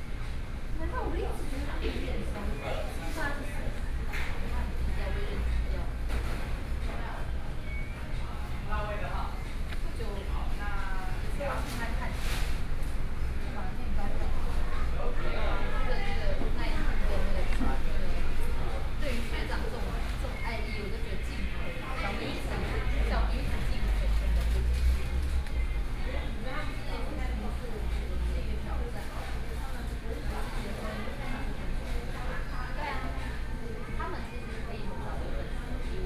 In the fast-food restaurant （KFC）, Traffic Sound
Binaural recordings
Mingli Road, Hualien County, Taiwan